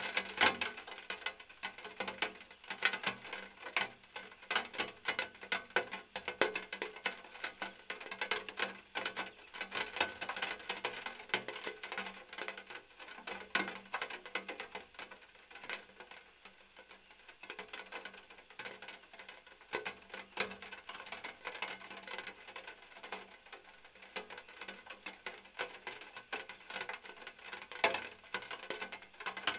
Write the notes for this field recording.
contact microphone on a mound-sign